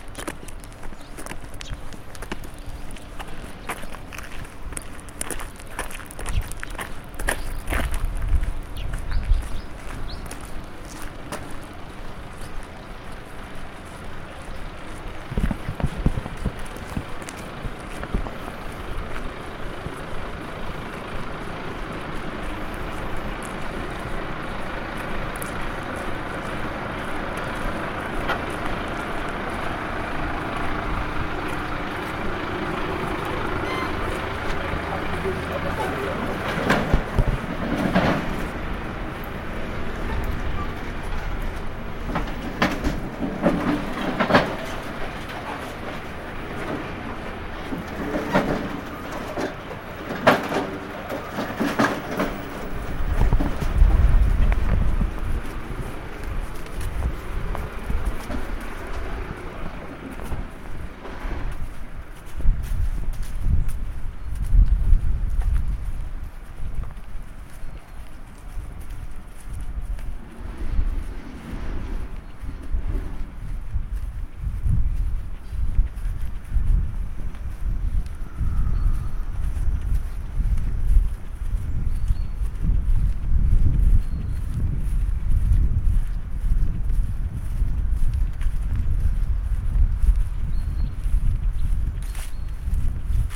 Humlebæk, Danmark - Walk on Krogerup Højskole
Escaping all the people and noise, go for a walk by yourself and enjoy the voice of the nature and of cause: new beers being delivered!
Humlebæk, Denmark, 5 March